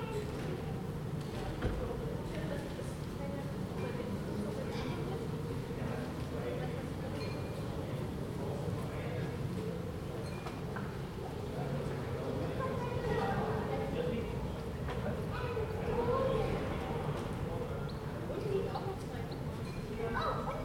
Recorded from my window with a Zoom H2n.
I am trying to work. A child's birthday is held in the yard. City noises all around
Halle (Saale), Germany, 11 August 2018, 17:30